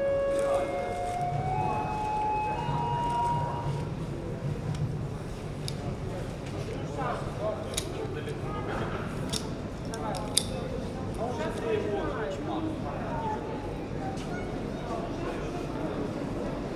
Subway Vokzalnya, Dnipro, Ukraine - Subway Vokzalnya [Dnipro]